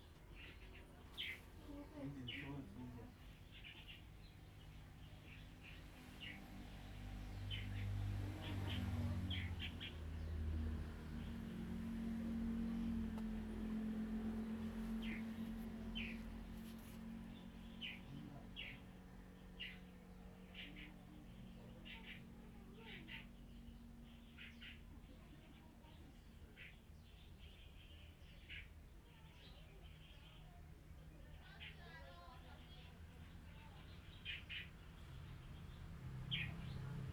Birds singing, In the cave trail, Traffic Sound
Zoom H2n MS +XY
Liuqiu Township, Pingtung County, Taiwan, 1 November